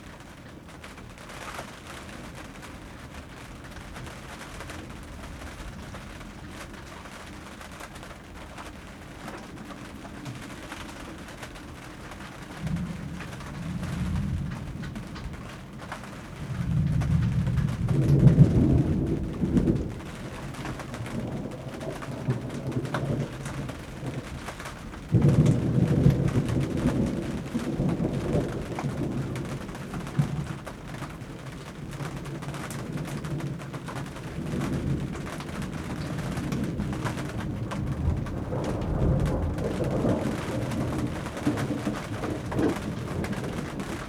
workum, het zool: marina, berth h - the city, the country & me: marina, aboard a sailing yacht
thunderstorm, rain hits the tarp
the city, the country & me: june 28, 2011